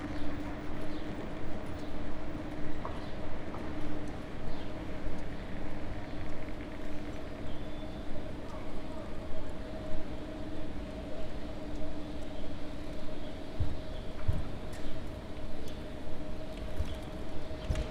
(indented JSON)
{
  "title": "S-Bahn, Am Hauptbahnhof, Frankfurt am Main, Deutschland - S-Bahn to the airport",
  "date": "2020-04-24 16:05:00",
  "description": "Ride to the airport in a very empty train...",
  "latitude": "50.11",
  "longitude": "8.66",
  "altitude": "112",
  "timezone": "Europe/Berlin"
}